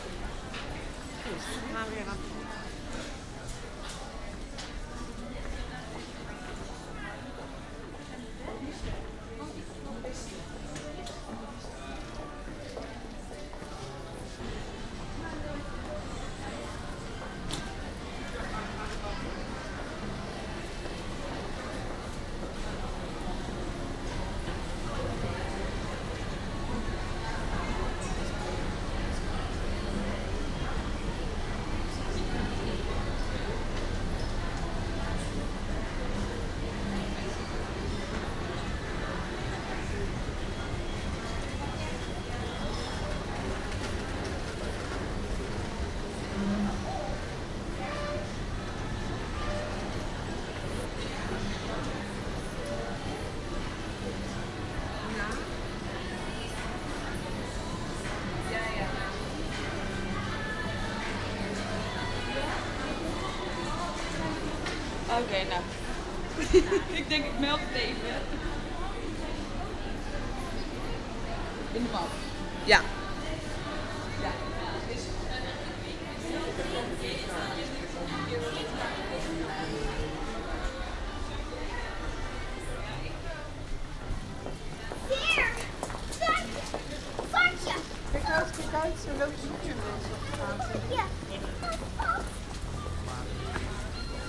Customers and employees of department store De Bijenkorf.
Recorded as part of The Hague Sound City for State-X/Newforms 2010.
The Hague, The Netherlands